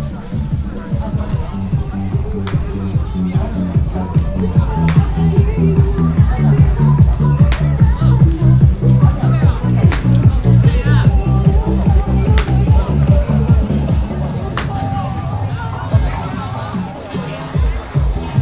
Baishatun, 苗栗縣通霄鎮 - Walk through the alley
Matsu Pilgrimage Procession, Crowded crowd, Fireworks and firecrackers sound, Walk through the alley in the village
Tongxiao Township, 白西68-1號, 9 March